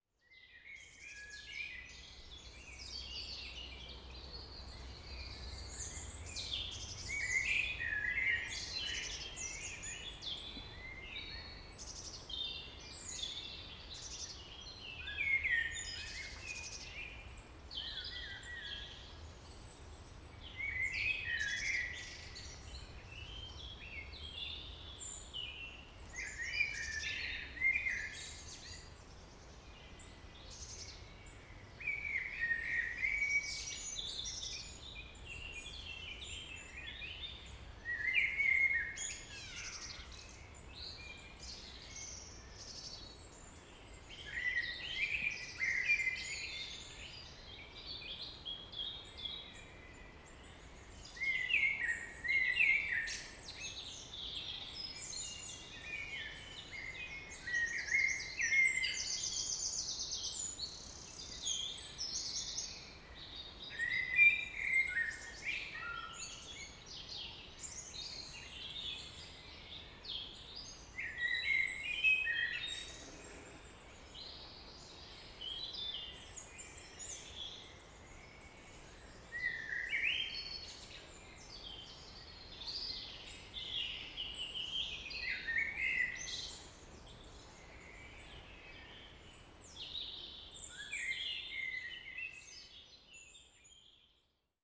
Vallée des Traouiero, Trégastel, France - Blackbird song [Valley Traouïero]
Début de soirée. Un merle et d'autres oiseaux .
Early evening. A blackbird and other birds.
April 2019.
April 2019